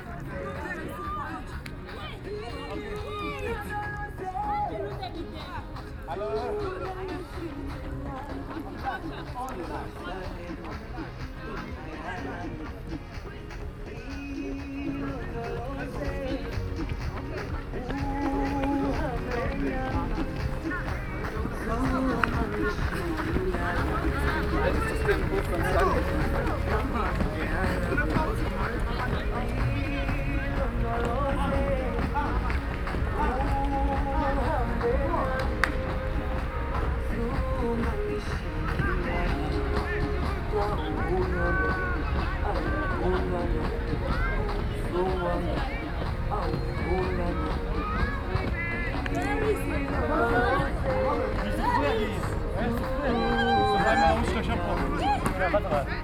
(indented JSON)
{
  "title": "Hoesch Park, Kirchderner Str., Dortmund, Germany - Sports at Hoesch Park",
  "date": "2020-09-16 19:00:00",
  "description": "During afternoons in September, I found Hoersch Park in Dortmund peopled with community groups, young and old, doing their various exercises in the sports ground. This group caught my attention with an unfamiliar exercise of jumping on steps. A young sports man from Ghana called Thomas K Harry decided to dedicate his skills and experience to the well-being of the community free of charge.\nyou may also listen to an interview with Thomas and member of the group here:",
  "latitude": "51.53",
  "longitude": "7.49",
  "altitude": "79",
  "timezone": "Europe/Berlin"
}